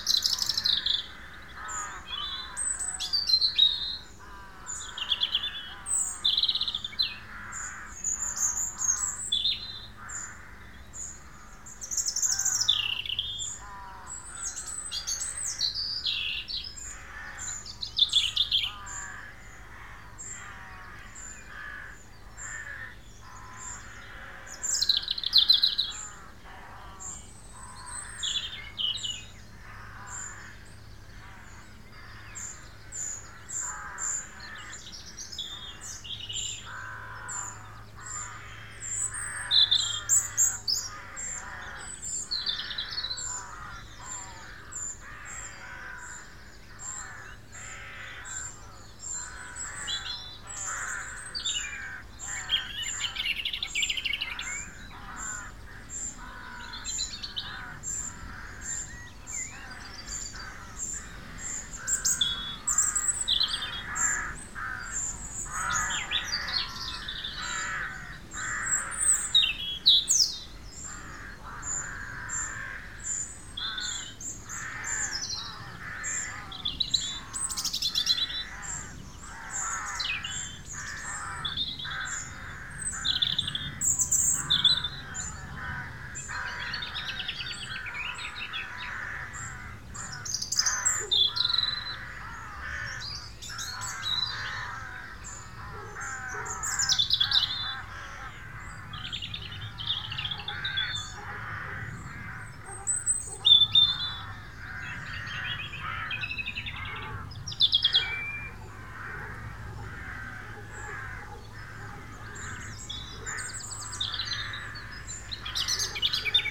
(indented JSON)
{
  "title": "Robertstown, Co. Meath, Ireland - MORNING BIRDS",
  "date": "2014-07-18 06:35:00",
  "description": "close to the trees, garden in rural situation, early morning, rode nt4 + sound devices 722",
  "latitude": "53.82",
  "longitude": "-6.81",
  "altitude": "93",
  "timezone": "Europe/Dublin"
}